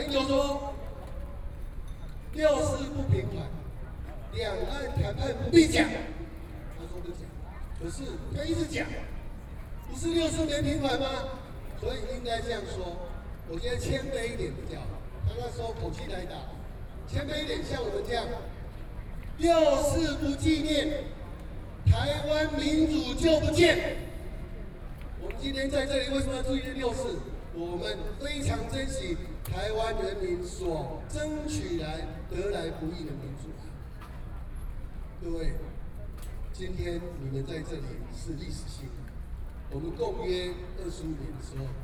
National Chiang Kai-shek Memorial Hall, Taipei - Speech
event activity of the Tiananmen Square protests, Sony PCM D50 + Soundman OKM II